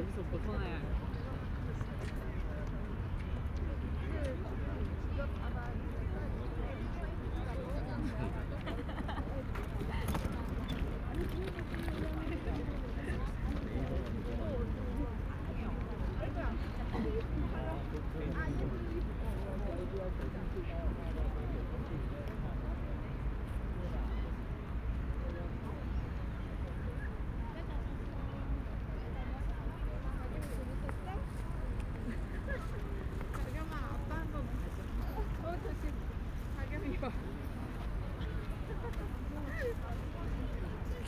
대한민국 서울특별시 서초구 잠원동 122 - Banpo Hangang Park, People taking walk
Banpo Hangang Park, People taking walk
반포한강공원, 사람들 산책하는 소리